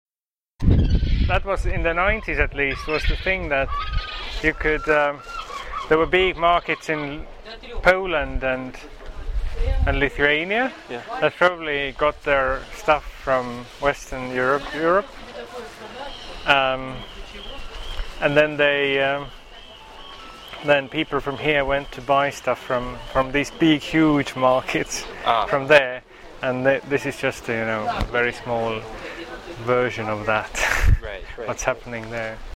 conversation with Andres Kurg about history and trajectory of Baltijaam market
Tallinn, Estonia, 21 April 2008